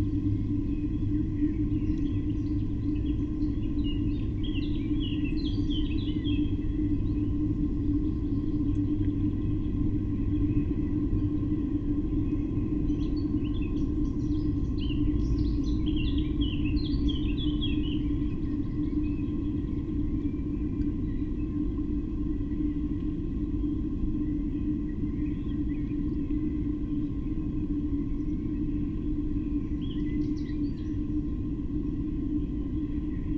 small gulf, mariborski otok, river drava - glass bowls, eight gray two white swans approaching